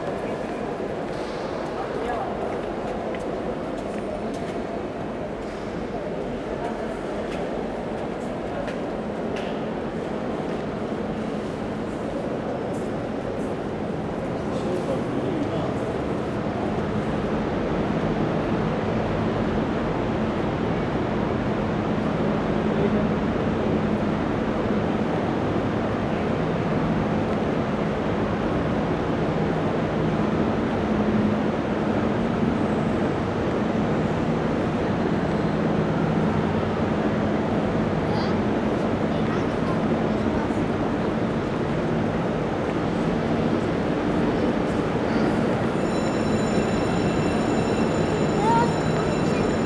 Antwerpen, Belgique - SNCB Antwerpen Centraal Station
Soundscape of the Antwerpen Centraal station. In first, the very big cupola, with intense reverberation. After on the platform, a train leaving the station, to Breda in the Nederlands.